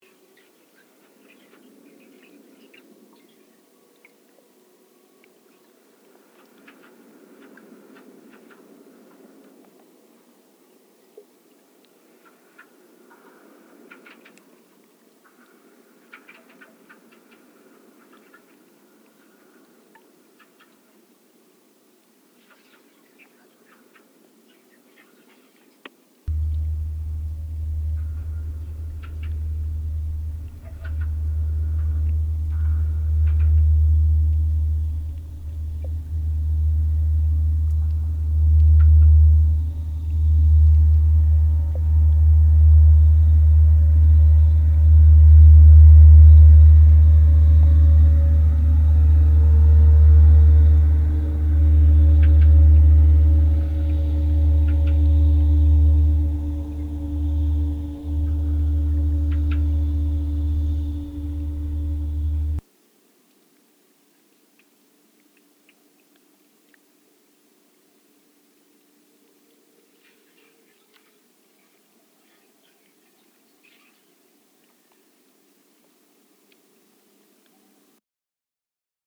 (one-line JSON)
{"title": "Kanaleneiland, Utrecht, The Netherlands - hydro + pot resonance", "date": "2014-03-12 14:57:00", "description": "Hydrophones in canal + stereo microphone", "latitude": "52.07", "longitude": "5.11", "altitude": "3", "timezone": "Europe/Amsterdam"}